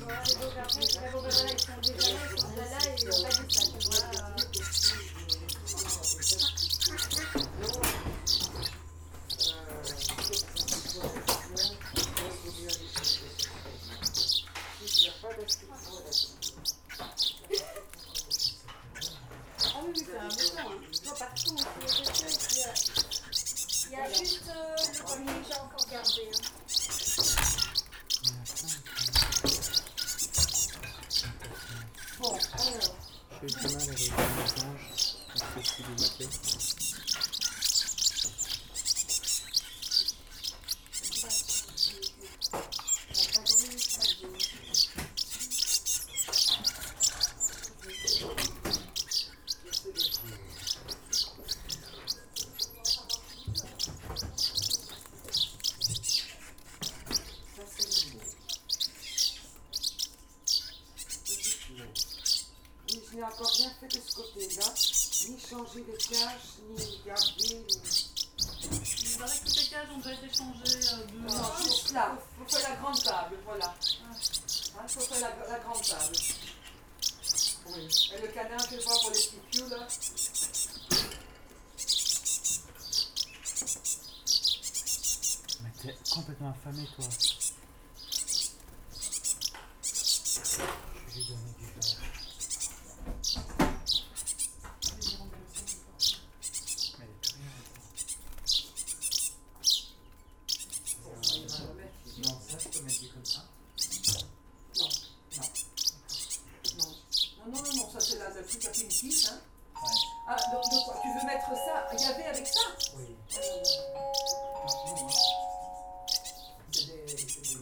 Ottignies-Louvain-la-Neuve, Belgique - Birdsbay, hospital for animals
Birdsbay is a center where is given revalidation to wildlife. It's an hospital for animals. In this recording, nothing special is happening, the recorder is simply disposed in a cage. You can hear juvenile tit, very juvenile blackbird and juvenile sparrow. At the backyard, some specialists put bats in transportation cages.
Ottignies-Louvain-la-Neuve, Belgium, July 2016